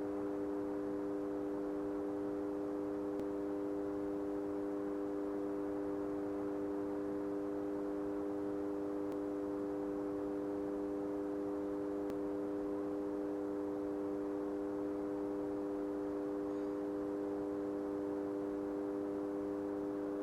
Ленинский пр-т., Москва, Россия - Near the transformer substation
Night. Quietly. Spring. Yard. You can hear the transformer substation humming.
16 May, 01:10